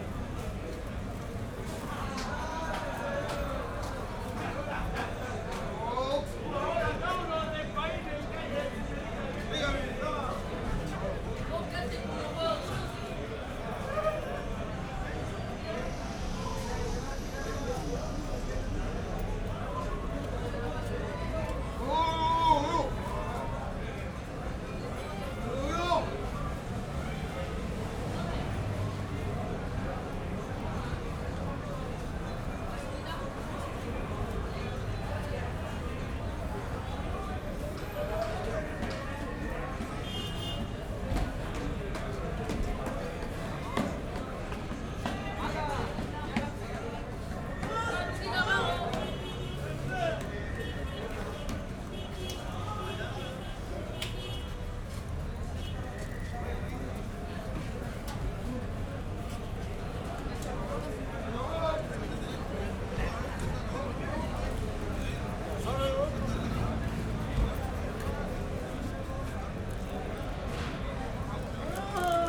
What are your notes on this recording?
crowded and busy atmosphere at Plazuela Ecuador: people at the taxi stand, in bars, at the fruit sellers, passers-by, (SD702, DPA4060)